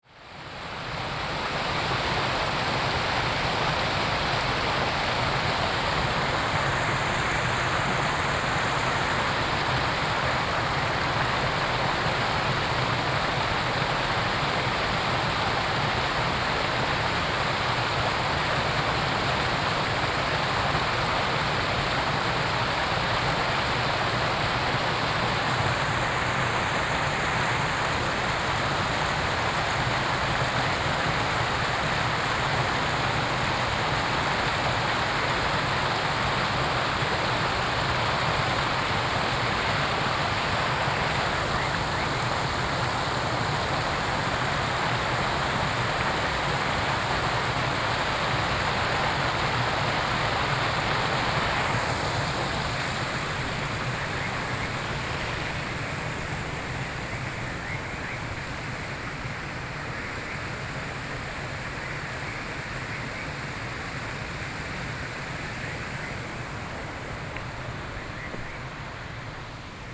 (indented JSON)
{
  "title": "Balian River, Sec., Balian Rd.New Taipei City - Stream",
  "date": "2012-07-16 07:01:00",
  "description": "Stream of sound, birds\nSony PCM D50",
  "latitude": "25.10",
  "longitude": "121.63",
  "altitude": "37",
  "timezone": "Asia/Taipei"
}